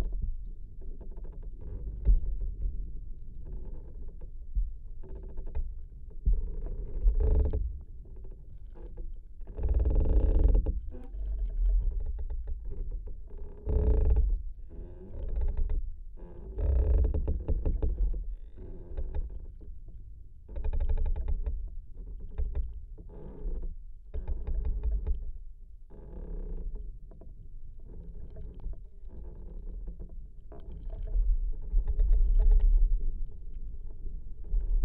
Vyžuonų sen., Lithuania, fallen tree
contact microphone recording of a dead tree swaying in the wind